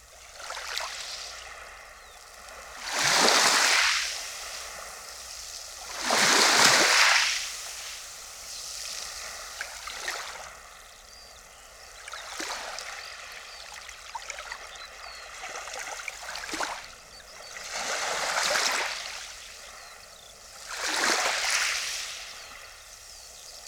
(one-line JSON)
{"title": "Baltic Sea, Nordstrand Dranske, Rügen - Tiny waves on sandy shore", "date": "2021-05-30 18:50:00", "description": "late may, a calm sunny evening at a sandy shoreline, tiny waves rolling in, moving sand up and down, in and out\nrecorded with Olympus LS11, pluginpowered PUI-5024 mics, AB_50 stereo setup", "latitude": "54.65", "longitude": "13.23", "altitude": "2", "timezone": "Europe/Berlin"}